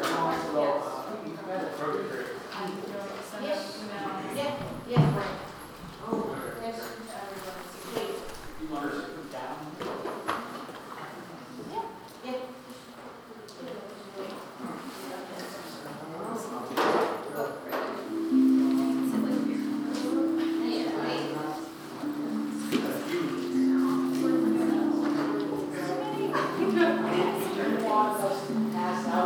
The Max and Nadia Shepard Recital Hall is a 125-seat hall named in honor of benefactors to the performing arts programs at New Paltz. It offers an intimate setting for chamber music performances and student recitals. This recording was taken just before a lecture was to begin. It was also taken using a Snowball condenser mic and edited through Garage Band on a Macbook Pro.
NY, USA